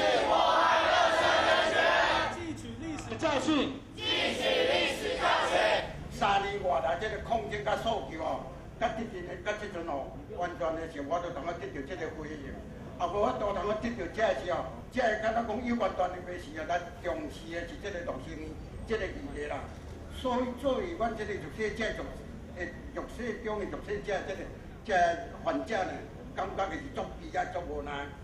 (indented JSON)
{
  "title": "Jingmei, New Taipei City - Protest and confrontation",
  "date": "2007-12-10 10:04:00",
  "description": "Police are working with Protesters confrontation, Sony ECM-MS907, Sony Hi-MD MZ-RH1",
  "latitude": "24.99",
  "longitude": "121.53",
  "altitude": "17",
  "timezone": "Asia/Taipei"
}